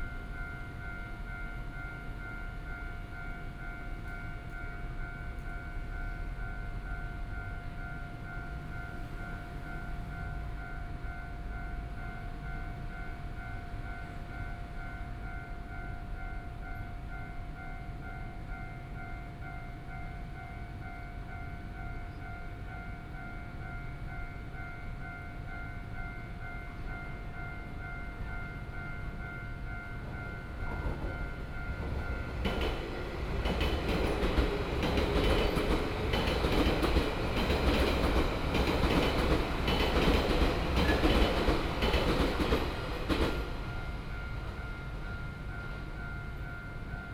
Donggang Road, Yilan City - Train traveling through
Train traveling through, Standing beside the railroad crossing, Binaural recordings, Zoom H4n+ Soundman OKM II
Yilan County, Taiwan, 8 November 2013, 11:30